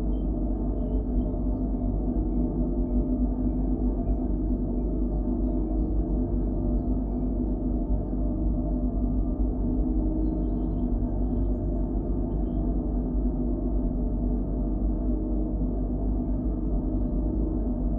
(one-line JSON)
{"title": "Könighsheide, Berlin, Deutschland - well, Brunnen 16", "date": "2022-04-30 11:55:00", "description": "Berlin Königsheide, one in a row of drinking water wells, now suspended\n(Sony PCM D50, DIY contact microphones)", "latitude": "52.45", "longitude": "13.49", "altitude": "36", "timezone": "Europe/Berlin"}